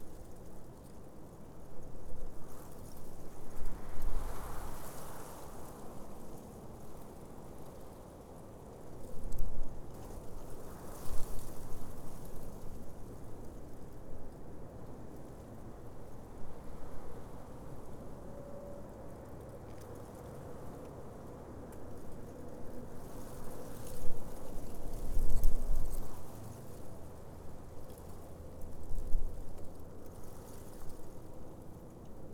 Black Canyon City, Arizona - wind in the thistles
Black Canyon City, Maggie Mine Rd.
"Thistles" were actually dead flowers, dry and rustling in the wind nicely. Distant traffic from I-17 can also be heard about a half a mile away.
AZ, USA, May 2017